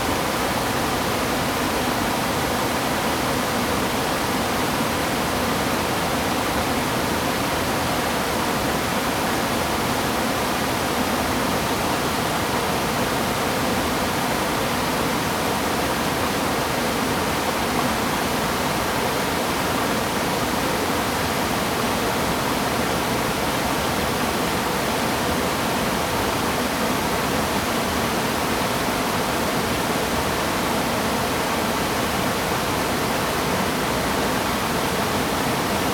stream, waterfall
Zoom H2n MS+ XY